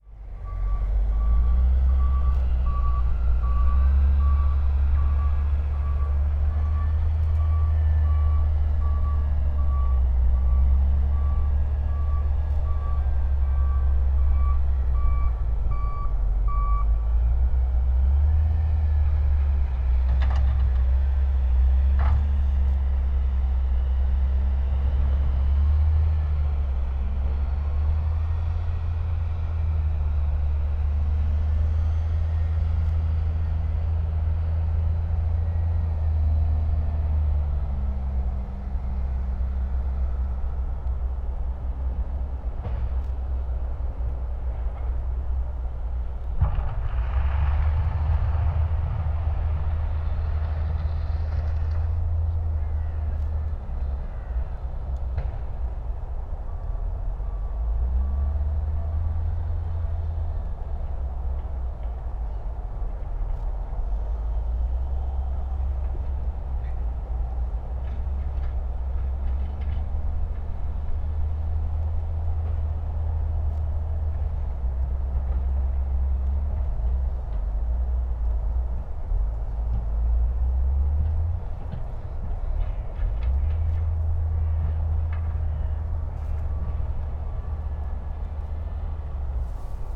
Tempelhofer Feld, Berlin, Deutschland - excavation work
around one of my favourite places, excavation work has started, an artificial pond for water management will be built. drone and hum of machines, and the motorway is quite present too today because of south west wind.
(Sony PCM D50, Primo EM172)
Berlin, Germany, December 2013